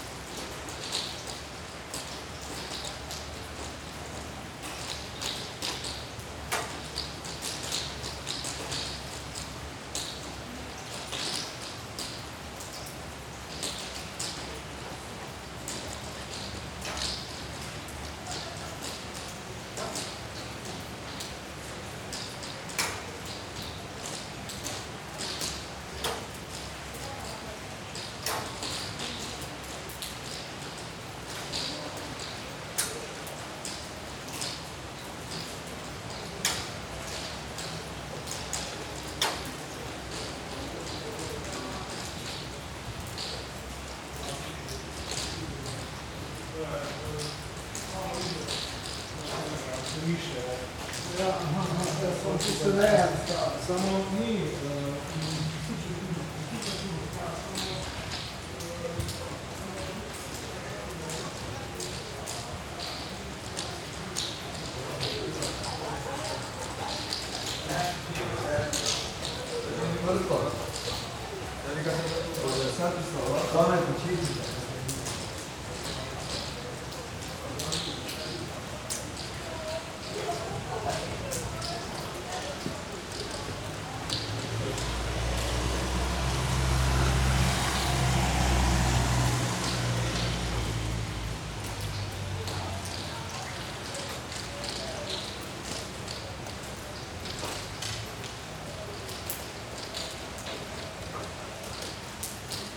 Maribor, Zitna ulica - rain tunnel resonance
strange metallic effects as the falling rain resonates in a carport tunnel into a new building's countryard
Maribor, Slovenia